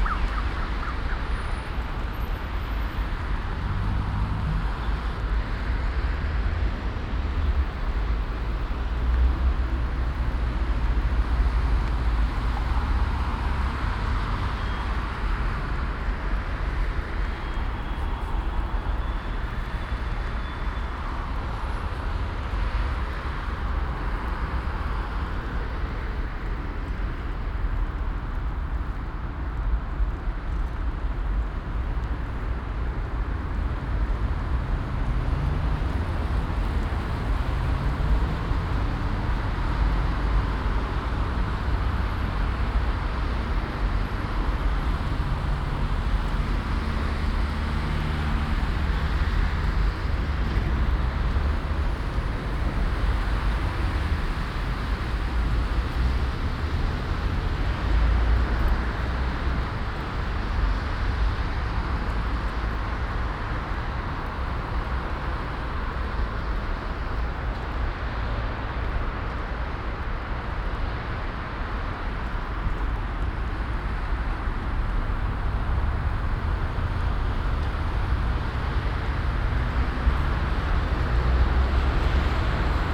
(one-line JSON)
{"title": "Grasweg, Kiel, Deutschland - Binaural soundwalk Kiel, Germany", "date": "2021-04-06 16:10:00", "description": "Binaural soundwalk in Kiel, Germany, 2021-04-06, pushing my bicycle from Grasweg to Gutenbergstraße, turned right to Eckernförder Straße, turned right following Eckernförder Straße for 1.7 km ending in an underground parking. Mostly traffic noise, @05:30 a very short and light hailstorm, occasionally slight wind rumble (despite wind protection), pedestrians and cyclists, birds (gull, black bird). Zoom H6 recorder, OKM II Klassik microphone with A3 power adapter and wind shield earmuffs.", "latitude": "54.33", "longitude": "10.12", "altitude": "31", "timezone": "Europe/Berlin"}